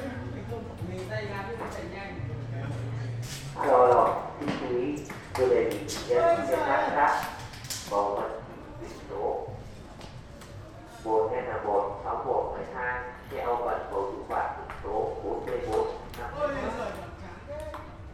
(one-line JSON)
{"title": "Little Hanoi, Libus", "date": "2008-04-10 12:42:00", "description": "Recording from the Vietnamese Market Halls SAPA in Libuš. The Little Hanoi is hidden in the outskirts, inside the industrial complex of the former nightmarish Prague Meat factory. They call the Market SAPA, inspired paradoxically by a beautiful town somewhere in the Vietnamese mountain range near the Chinese border.", "latitude": "50.00", "longitude": "14.47", "altitude": "295", "timezone": "Europe/Prague"}